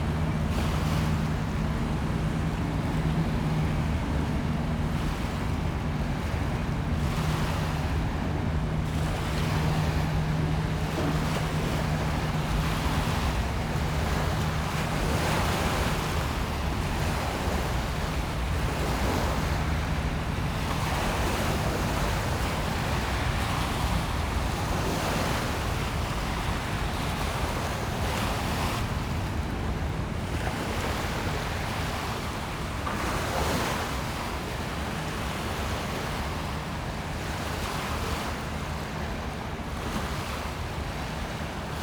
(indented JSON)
{
  "title": "North Thames side atmosphere mid tide waves, Thames Exchange, Queen St Pl, London, UK - North Thames side atmosphere mid tide waves",
  "date": "2022-05-17 11:45:00",
  "description": "This section of the Thames footpath passes under bridges and follows narrow lanes. The air conditioning in the adjacent buildings gives a unchanging airy drone - essentially a constant sonic fog - which envelopes all other sounds. When the tide is down waves on the beach are heard, as are more distant traffic, sirens or planes. Right behind me is one air conditioning outlet. Others are either side. The view is potentially interesting, but it is not a place to linger.",
  "latitude": "51.51",
  "longitude": "-0.09",
  "altitude": "17",
  "timezone": "Europe/London"
}